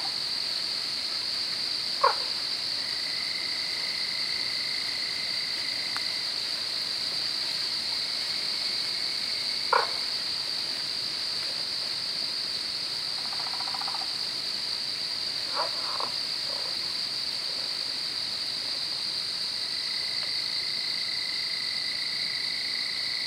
Lost Maples State Park, TX, USA - Night Insects, Frogs & Birds
Recorded with a pair of DPA4060's into a Marantz PMD661
Vanderpool, TX, USA, October 2015